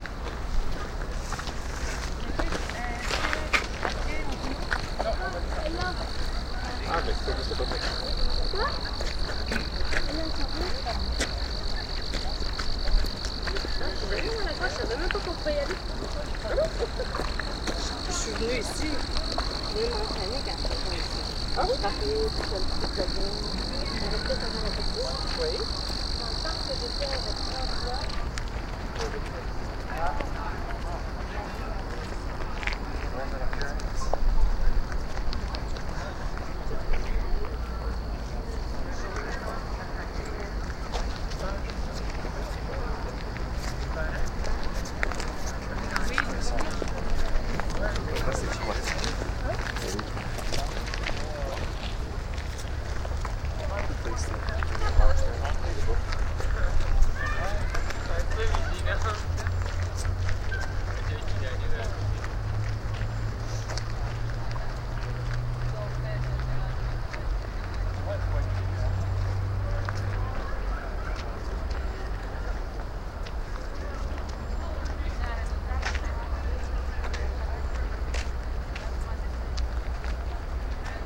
Montreal: Mount Royal (walk to Colline de la Croix) - Mount Royal (walk to Colline de la Croix)
equipment used: Homemade binaural headphones + Sony minidisc recorder
A short walk up to Colline de la Croix, along a path adjacent to a transmitter tower to the summit near the cross